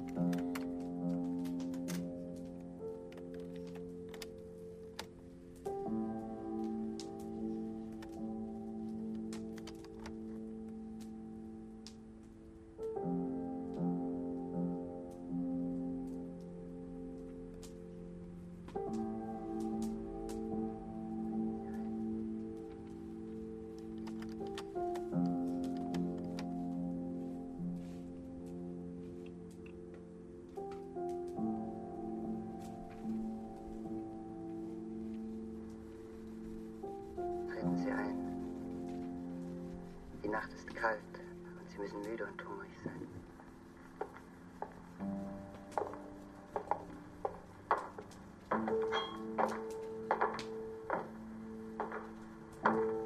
Camp Exodus - Balz is playing the tapes at the Camp Exodus
camp exodus is a performative architecture, a temporary laboratory, an informative space station in the format of a garden plot.
orientated on the modular architectures and "flying buildings", the camp exodus compasses five stations in which information can be gathered, researched, reflected on and reproduced in an individual way. the camp archive thus serves as a source for utopian ideas, alternative living concepts, visions and dreams.
Balz Isler (Tapemusician) was invited to experiment with Gordon Müllenbach (Writer).
2 August, ~17:00